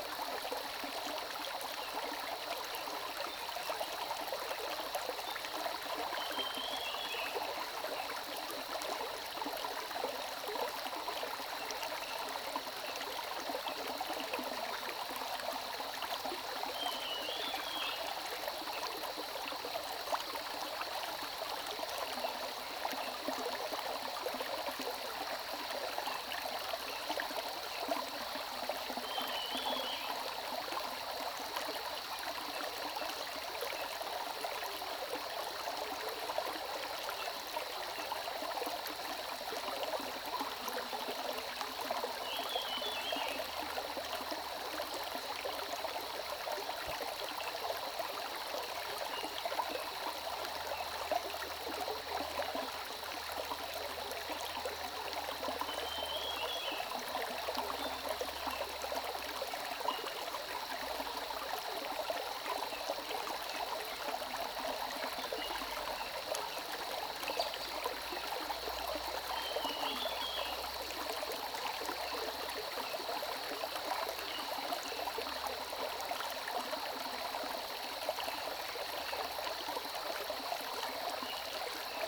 中路坑溪, 埔里鎮桃米里 - Stream
Streams and birdsong, The sound of water streams
Zoom H2n MS+XY